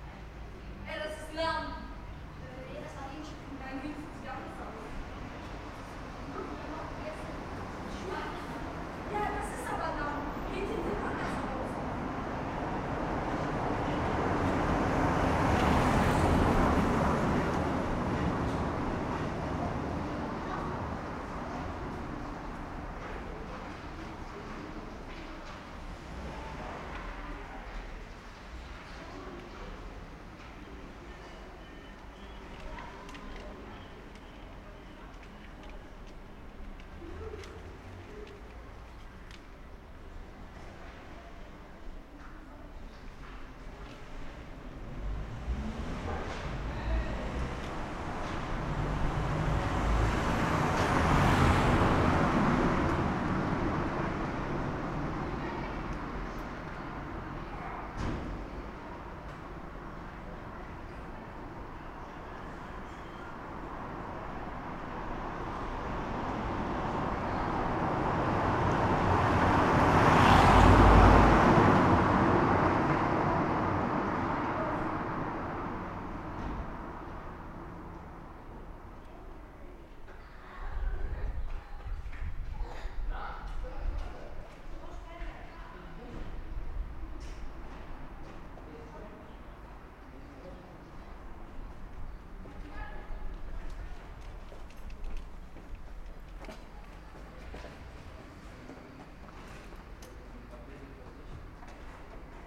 leipzig lindenau, guthsmuthsstraße
In der guthsmuthsstraße, aufgenommen vom autodach aus auf dem parkplatz am straßenrand. anwohner und autos.
31 August 2011, 17:30